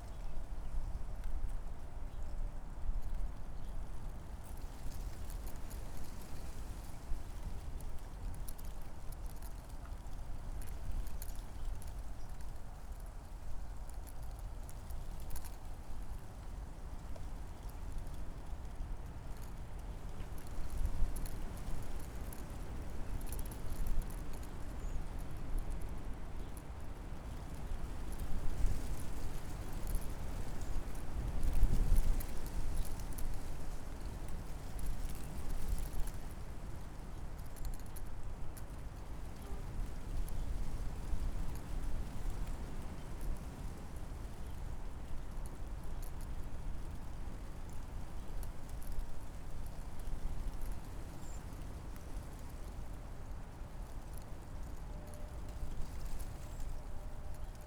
Winter day, place revisited
(SD702, DPA4060)
Berlin, Germany, 28 February 2020